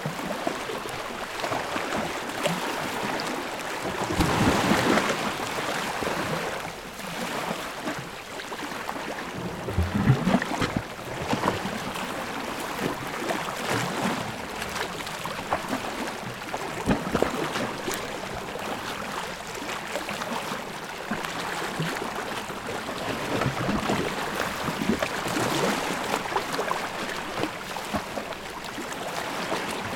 Hengam Island, Hormozgan Province, Unnamed Road, Iran - The sea is Rising in Hengam island
It was full moon night in a remote area in Hengam Island. The sea was rising because of the tide.
شهرستان قشم, استان هرمزگان, ایران, 13 December 2019, ~22:00